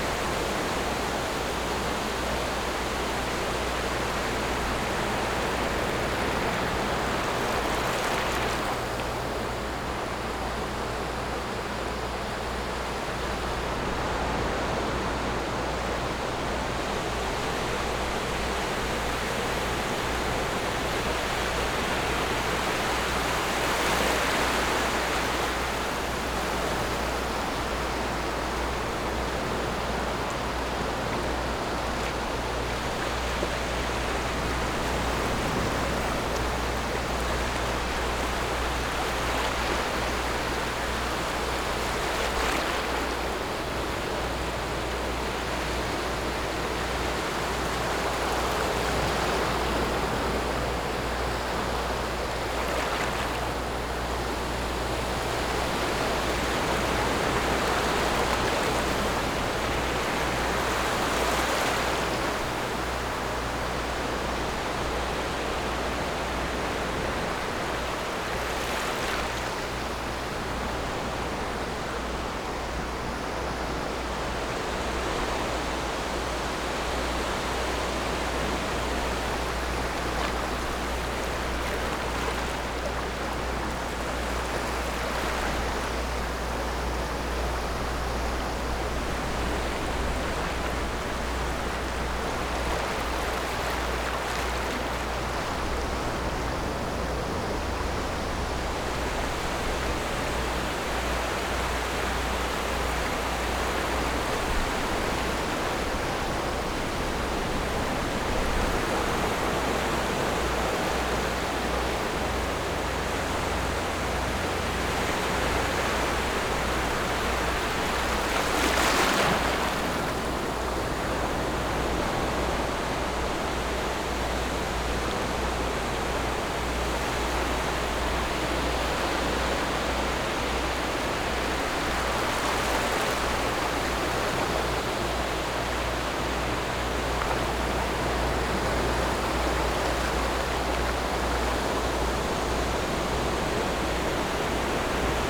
頭城鎮外澳里, Yilan County - sound of the waves
In the beach, There are boats on the distant sea, Hot weather, sound of the waves
Zoom H6 MS+ Rode NT4
Yilan County, Taiwan, 29 July 2014, 15:01